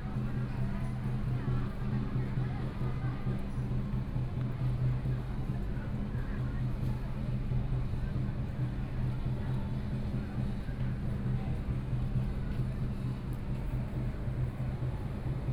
At the station next to the Community Carnival, Binaural recordings, Traditional percussion performances, Sony PCM D50 + Soundman OKM II
Beitou District, Taipei City, Taiwan, 3 November